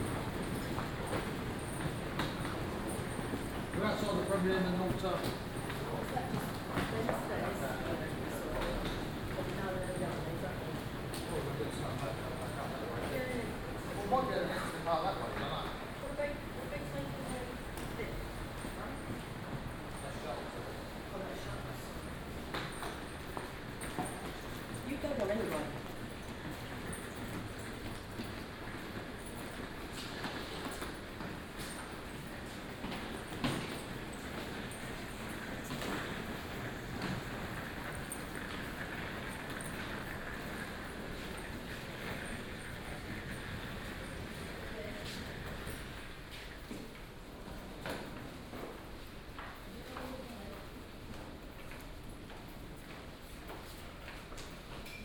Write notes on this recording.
London Gatwick airport, walk to the shuttle station. (Sony PCM D50, OKM2 binaural)